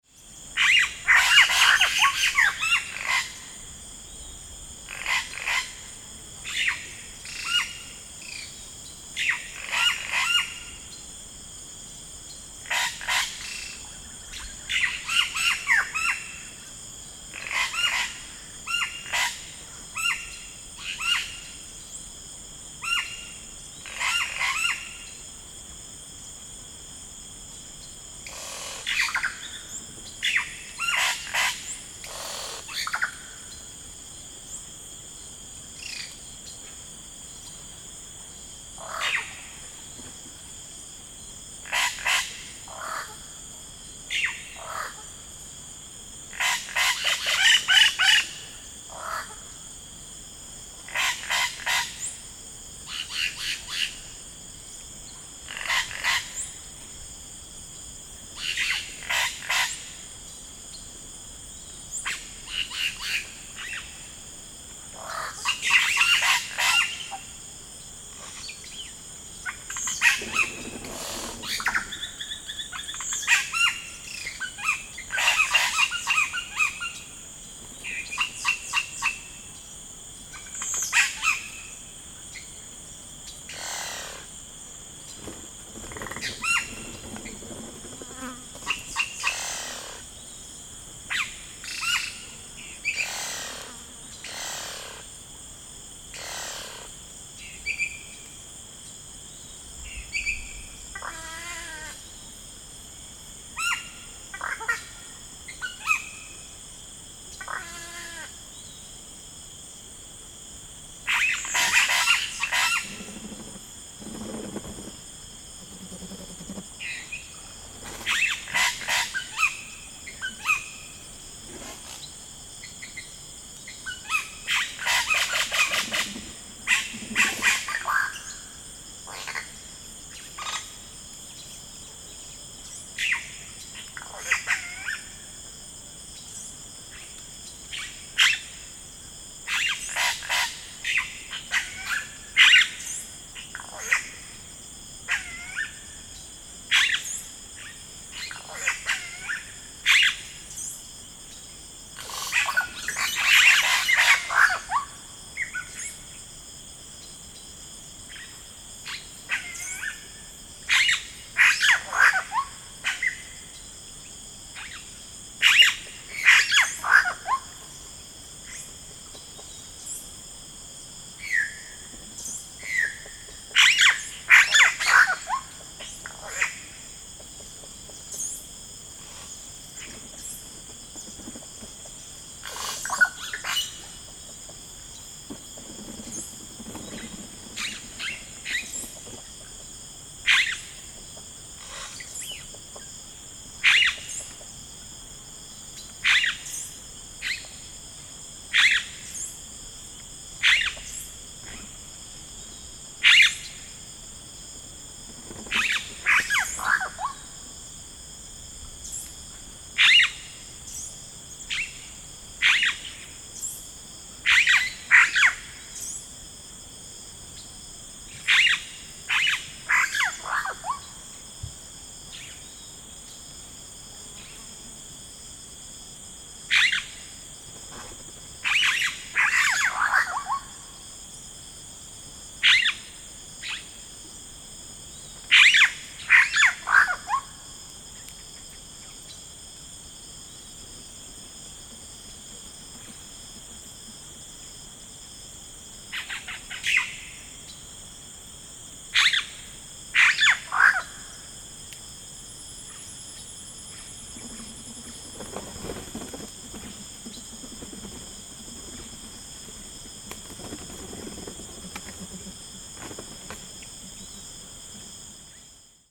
Tauary (Amazonian Rainforest) - Yellow Cacique
Some Yellow-rumped Cacique singing in the Amazonian Rainforest, close to Tauary (Tefé, Amazona, Brazil).